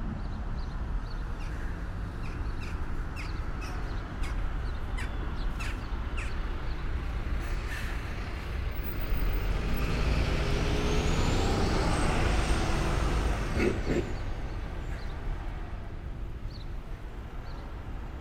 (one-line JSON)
{"title": "Hulksbrug, Angstel, Abcoude, Netherlands - A local corner", "date": "2018-07-03 10:05:00", "description": "Recorded with two DPA 4061's as a binaural setup/format. Traffic passing a small bridge.", "latitude": "52.27", "longitude": "4.97", "altitude": "2", "timezone": "Europe/Amsterdam"}